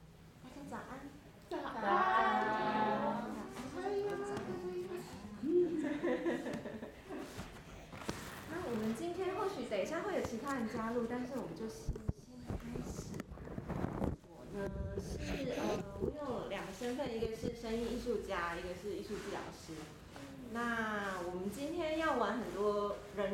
社會住宅D區居民聚會 - 親子聚會